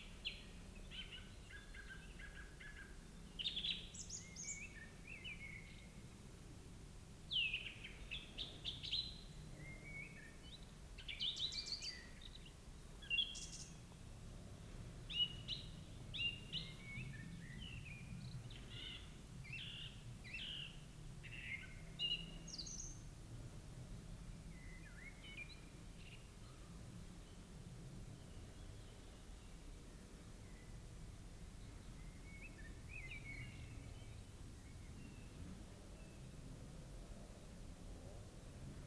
Schluchsee, Deutschland - forrest
early evening end of may at the edge of the forest, before sunset; birds, distant saw, distant traffic. sennheiser ambeo headset